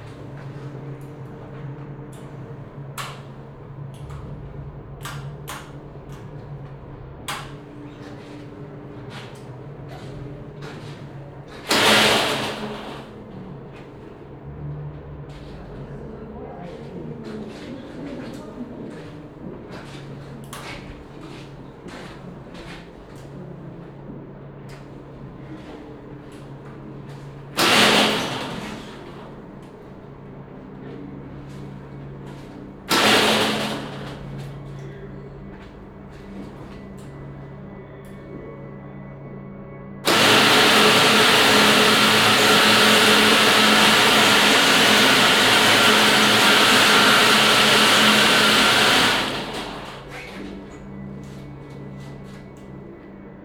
Altstadt-Nord, Köln, Deutschland - Cologne, Museum Ludwig, machines by Andreas Fischer
Inside the museum in the basement area - during an exhibition of sound machines by artist Andreas Fischer. Here a room with a big metal shelf in the center. On the shelf are placed a bigger number of small motors that start to move and rattle triggered by the visitors motion. In the backgound the sound of other machines and visitors.
soundmap nrw - social ambiences, topographic field recordings and art places
Cologne, Germany, 26 December 2012